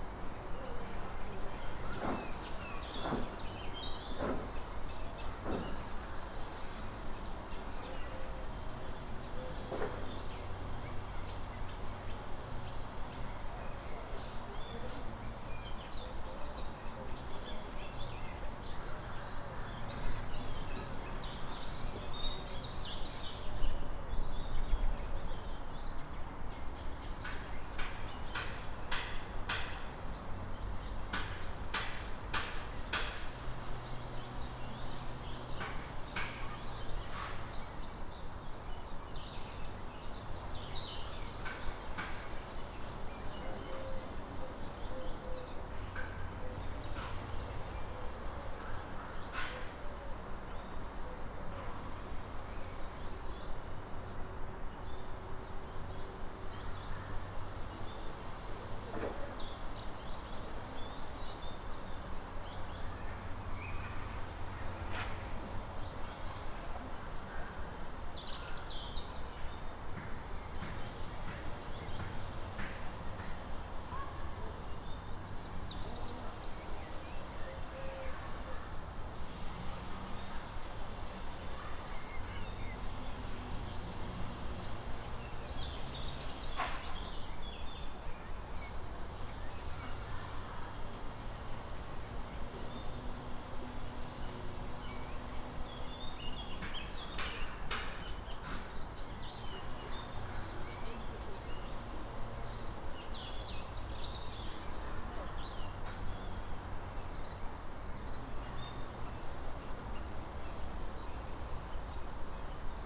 Anykščių g., Kaunas, Lithuania - Calm suburban atmosphere
Calm and idyllic atmosphere in the inner city suburban neighborhood. Birds, distant traffic, one car passing by at one time, sounds of people working in the distance. Recorded with ZOOM H5.